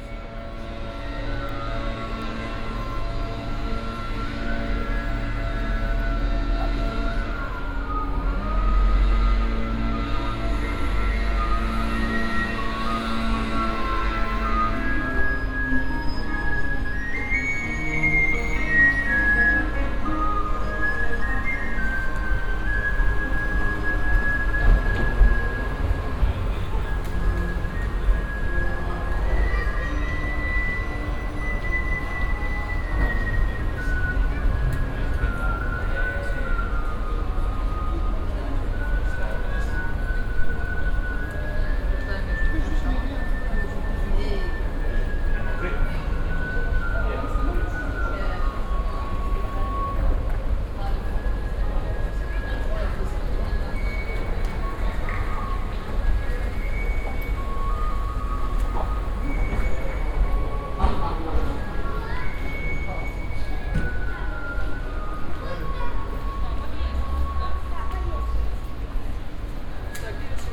Gare dAngers Saint-Laud, Angers, France - (599) entering Gare dAngers Saint-Laud
Entering Gare d'Angers Saint-Laud.
recorded with Soundman OKM + Sony D100
sound posted by Katarzyna Trzeciak